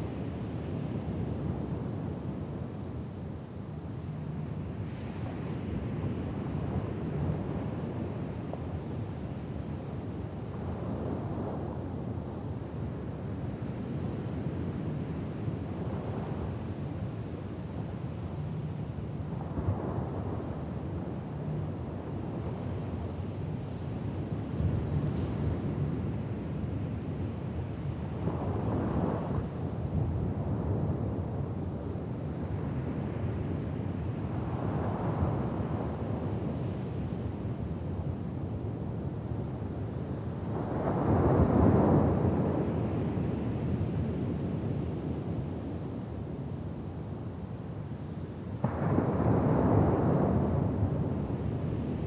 On the sand, St Ninian's Isle, Shetland, UK - The wind blowing over a beer bottle buried in the sand
After a lovely walk around the headland trying to photograph and record sheep, myself, Kait and Lisa had a picnic. One feature of this picnic was some tasty Shetland ale, and carrying the empty glass beer bottle back across the island, I was delighted by the sound of the wind playing over the top of it, and the flute-like tones that emanated. When we got down to the beach, I searched for a spot in the sand where the bottle might catch the wind in a similar way, and - once I found such a spot - buried it there. I popped my EDIROL R-09 with furry Rycote cover down in the sand beside it, and left everything there to sing while I went to record the sand and the water by the shoreline. When I listened back to the recording, I discovered that a small fly had taken an interest in the set up, and so what you can hear in this recording are the waves bearing down on the beach, the wind blowing across the emptied beer bottle, and the tiny insect buzzing around near the microphones.